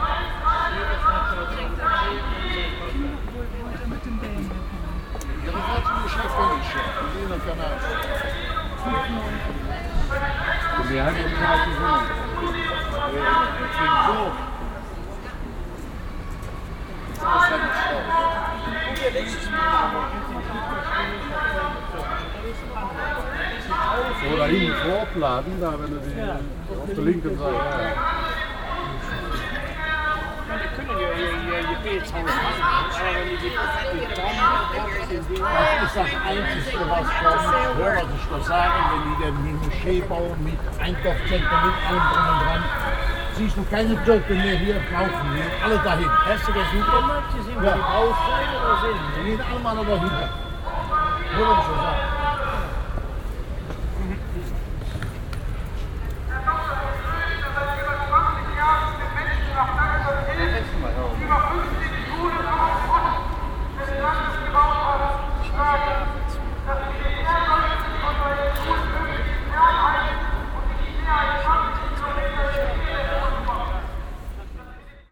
konversationen wartender taxifahrer an kölns touristenmeile dom - zufalls aufnahmen an wechselnden tagen
soundmap nrw: social ambiences/ listen to the people - in & outdoor nearfield recordings